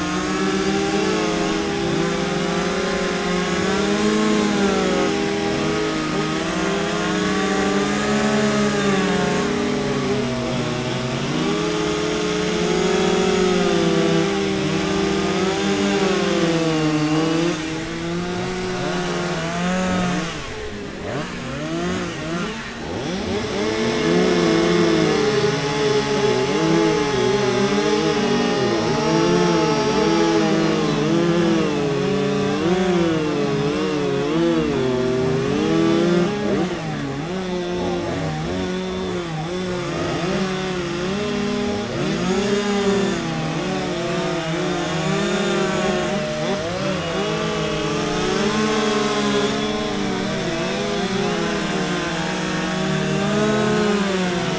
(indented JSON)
{
  "title": "Stadtpark, Vienna, Austria - Chainsaw Competition, Vienna",
  "date": "2006-09-10 11:50:00",
  "description": "Chainsawing competition in Vienna.\nSoundman OKMII binaural microphones with Edirol R09",
  "latitude": "48.21",
  "longitude": "16.38",
  "altitude": "171",
  "timezone": "Europe/Vienna"
}